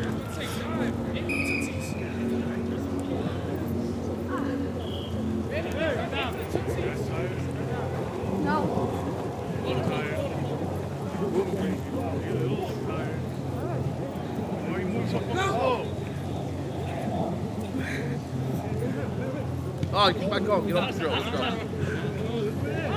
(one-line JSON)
{"title": "Ave, Queens, NY, USA - Karaoke, Backpedal, Shuffle and Sprint", "date": "2022-03-27 13:30:00", "description": "A young rugby team doing a four cone exercise of Karaoke, Backpedal, Shuffle, and Sprint.", "latitude": "40.71", "longitude": "-73.89", "altitude": "33", "timezone": "America/New_York"}